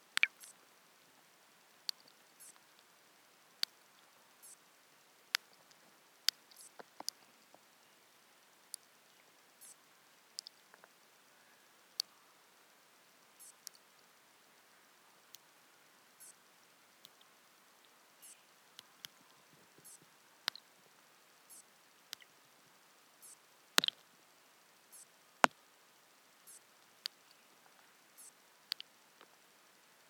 under the water (the water drops falling down into the pond)
Taipei City, Taiwan, 18 October 2012